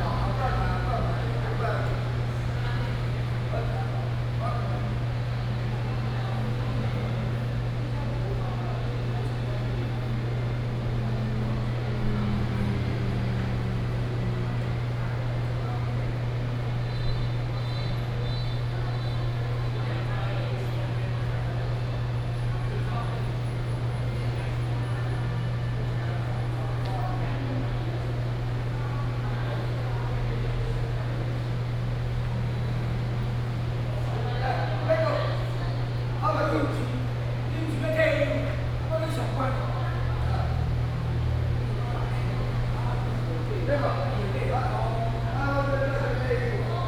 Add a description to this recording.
In the hall, Group of elderly people in the chat, Air conditioning noise, Traffic Sound